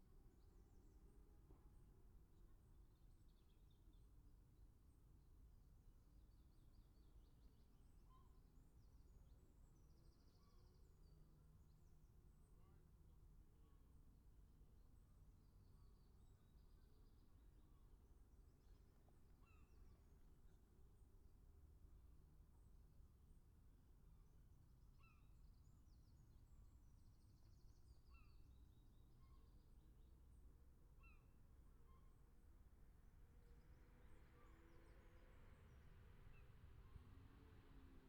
Scarborough, UK - motorcycle road racing 2017 ... 600 ...
600cc practice ... odd numbers ... Bob Smith Spring Cup ... Olivers Mount ... Scarborough ... open lavalier mics clipped to sandwich box ...